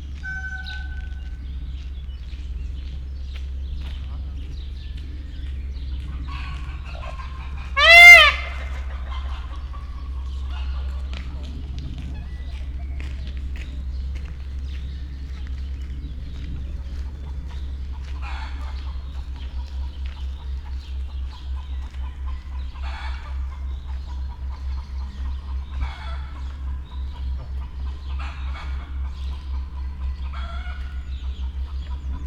Pfaueninsel, Berlin - screams
peacocks, chickens, steps